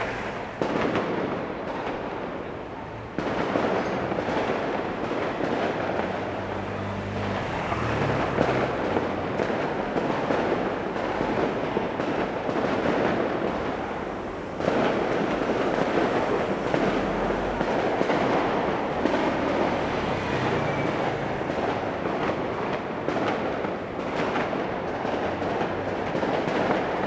Ln., Tonghua St., Da’an Dist. - The sound of firecrackers and fireworks
The sound of firecrackers and fireworks, There are nearby temple festivals
Sony Hi-MD MZ-RH1 + Sony ECM-MS907